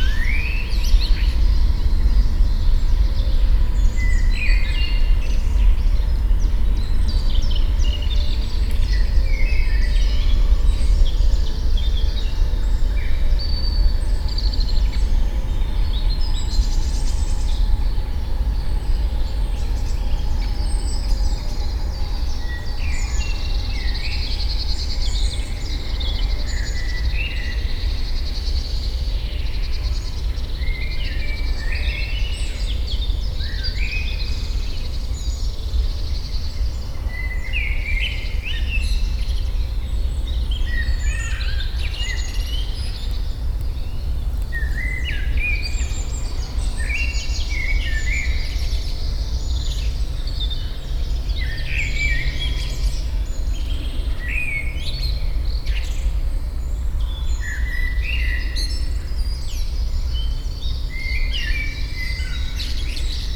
Gebrüder-Funke-Weg, Hamm, Germany - morning spring birds Heessener Wald
hum of the morning rush hour still floating around the forest in seasonal mix with bird song